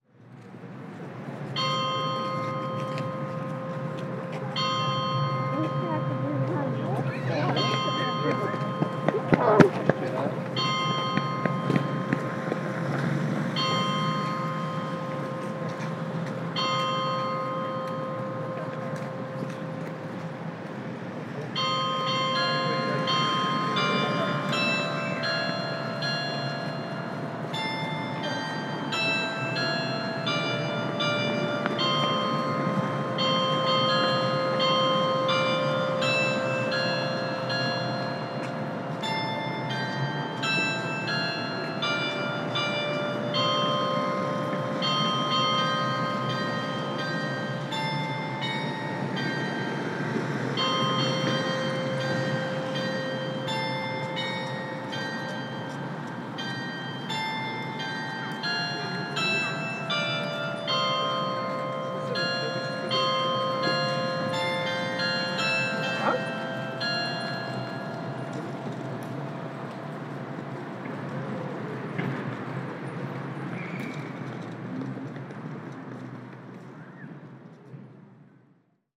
Church of St. Anne, Kraków, Poland - (869 AB) Carillon
AB stereo recording of Carillon of Church of St. Anne at 6 pm on Sunday.
Recorded with a pair of Sennheiser MKH 8020, 17cm AB, on Sound Devices MixPre-6 II.
województwo małopolskie, Polska